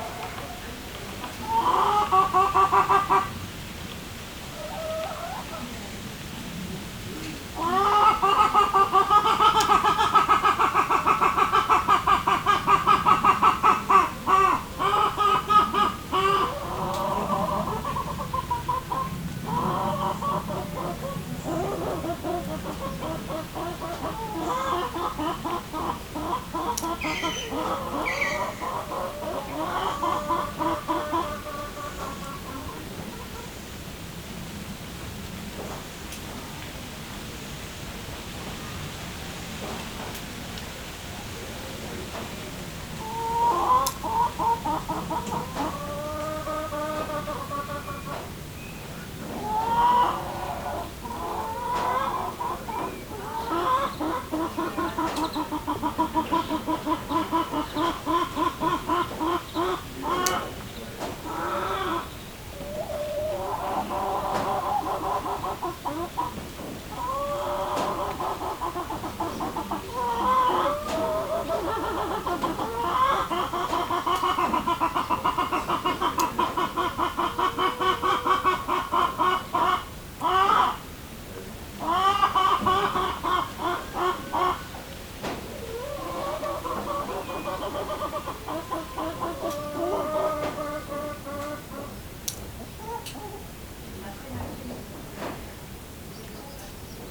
Hens near the site of Esserres, place of festival and exhibitions
Binaural recording with Zoom H6
Lavacquerie, France